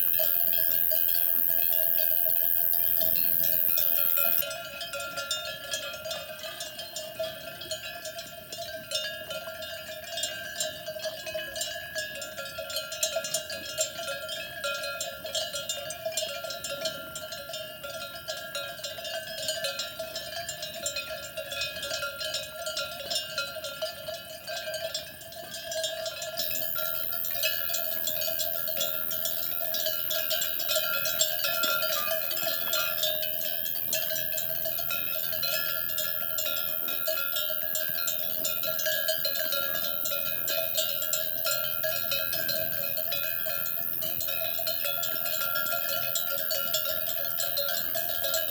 SBG, Ctra. Farreres - Anochecer
Escena a la caída del sol un dia cualquiera de verano junto a la Carretera de les Farreres.